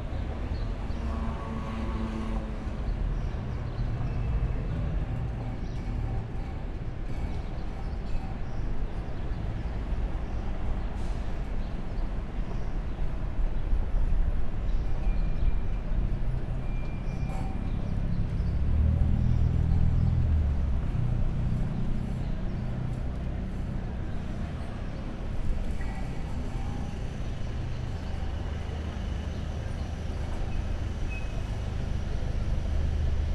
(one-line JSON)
{"title": "18 Elliott Street Auckland Central 1010", "date": "2010-09-29 02:20:00", "description": "street sounds from side walk", "latitude": "-36.85", "longitude": "174.76", "altitude": "1", "timezone": "Pacific/Auckland"}